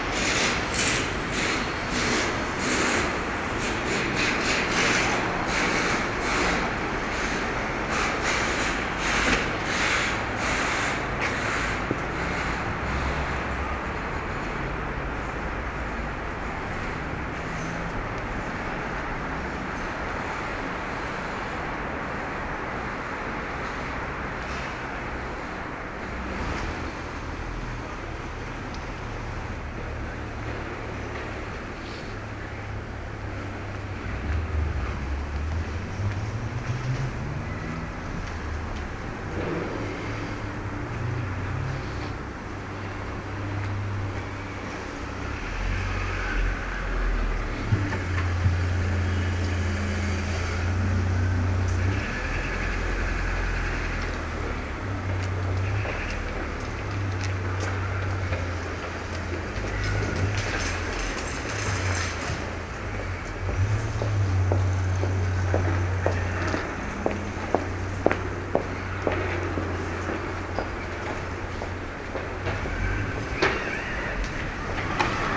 Dieffenbachstraße, Berlin, Deutschland - Soundwalk Dieffenbachstrasse
Soundwalk: Along Dieffenbachstrasse
Friday afternoon, sunny (0° - 3° degree)
Entlang der Dieffenbachstrasse
Freitag Nachmittag, sonnig (0° - 3° Grad)
Recorder / Aufnahmegerät: Zoom H2n
Mikrophones: Soundman OKM II Klassik solo